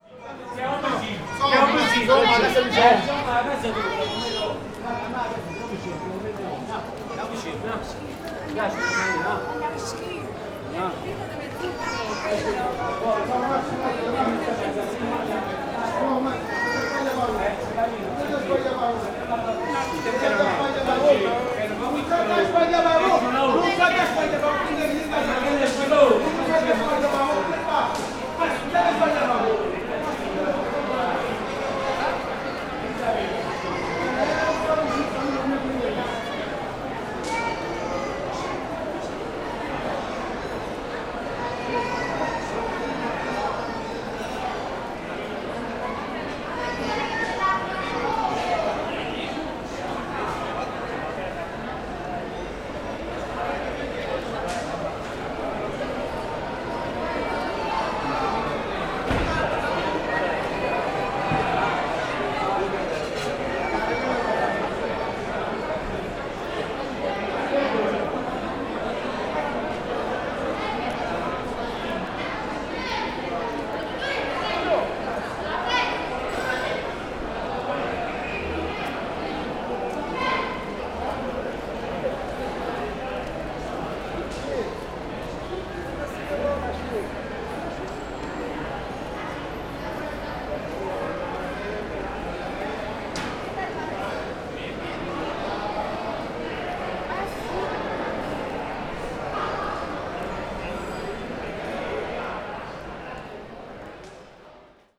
Madrid, Plaza Mayor - fighting couples
two couples arguing among another. they looked really furious, man nervously checking their cell phones while being bashed with purses by the women. unclear what was going on. seem each of them was in their own reality.